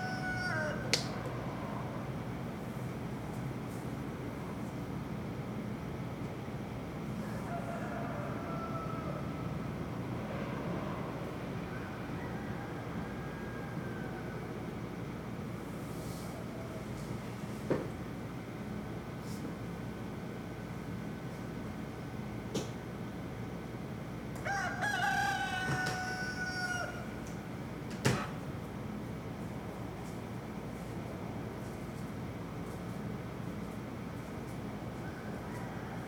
Havana, Cuba - Vedado early morning soundscape
Early morning in the Vedado neighbourhood, with roosters and truck.
La Habana, Cuba, 18 March 2009, 03:30